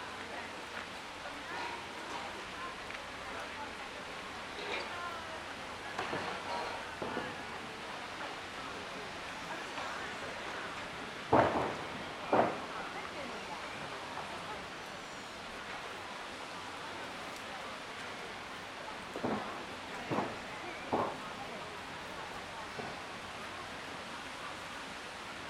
L'Aquila, PArco del Castello - 2017-05-29 13-Parco del Castello